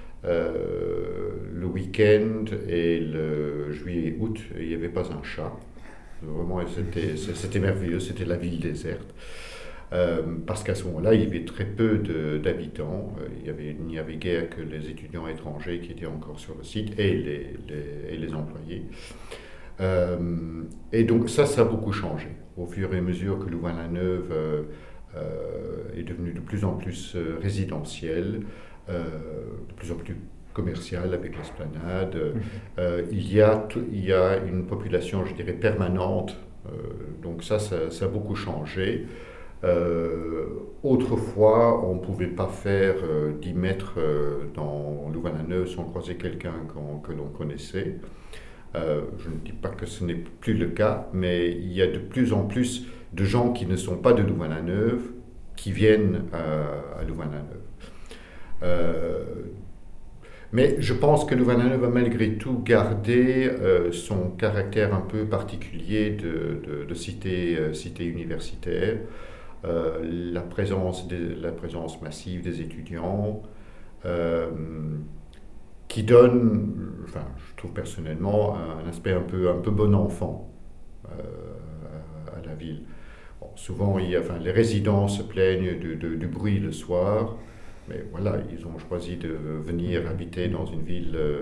Ottignies-Louvain-la-Neuve, Belgique - David Phillips
David Phillips is working in Louvain-La-Neuve since 23 years. He gives his view on the city evolution. His look is very interesting as he's involved in architecture. This is a rare testimony.